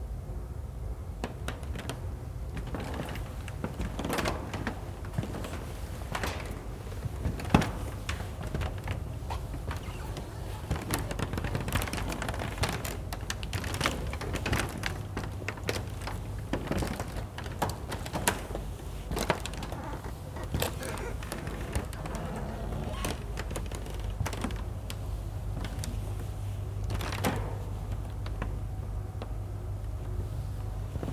Nördliche Innenstadt, Potsdam, Germany - Nightingale floor - a pressure of creaks, squeaks and groans
Walking over the spectacularly creaky floor of the disused library/sports hall in ZeM (Brandenburgisches Zentrum für Medienwissenschaften - Brandenburg Media College). This impressive building is a legacy from the DDR, now used as a college but scheduled for demolition in the future. This recording was made walking over the wooden floor in the near dark trying to avoid empty shelves and somewhat precarious piles of discarded items stacked there. The idea of the 'nightingale floor' comes from Japan, where such a creaky floor was used to forewarn of approaching people and guard against attacks by stealthy assassins.